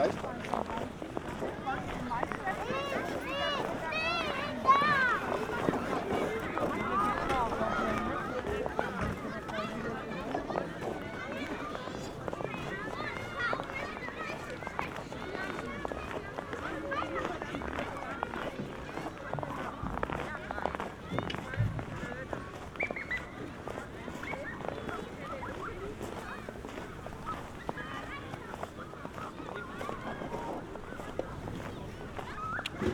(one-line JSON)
{"title": "Görlitzer Park, Berlin, Deutschland - snow walking, playground, sledge riders", "date": "2021-02-07 13:45:00", "description": "Berlin, Görlitzer Park, cold Winter Sunday afternoon, heavy snowing, walking into the park, a playground /w a small hill, many kids and parents riding sledges, Corona/Covid rules are paused...\n(SD702, Audio Technica BP4025)", "latitude": "52.49", "longitude": "13.44", "altitude": "36", "timezone": "Europe/Berlin"}